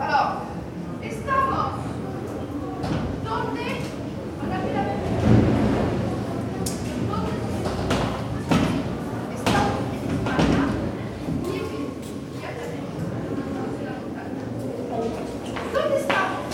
Couloir du Bâtiment, collège de Saint-Estève, Pyrénées-Orientales, France - Ambiance de couloir 1er étage, cours d'espagnol
Preneur de son : Anthony
17 March 2011, ~16:00